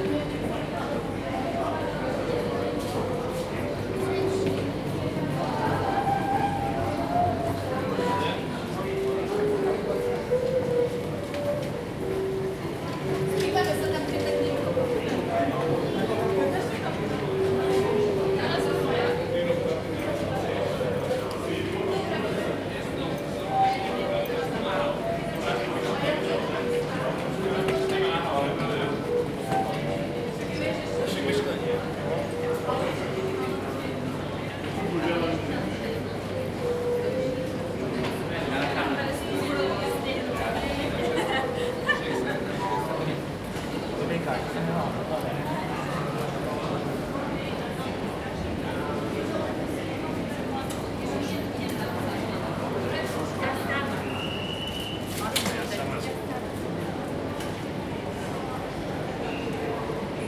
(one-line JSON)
{"title": "Bratislava hl.st., Námestie Franza Liszta, Bratislava-Staré Mesto, Slovakia - Hráč na fujaru na Hlavné stanici a hlášení spojů", "date": "2020-02-01 13:18:00", "description": "Na cestě z Budapešti při přestup v Bratislavě.", "latitude": "48.16", "longitude": "17.11", "altitude": "176", "timezone": "Europe/Bratislava"}